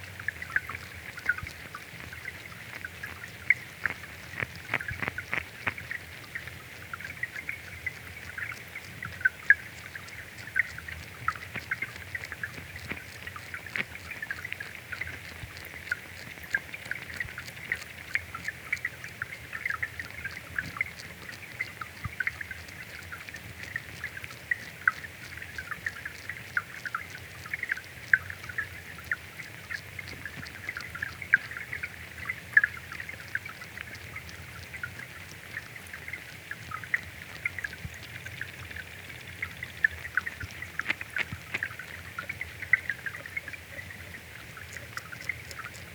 Shallow water at the edge of Digley Reservoir. Thousands of wriggling tadpoles.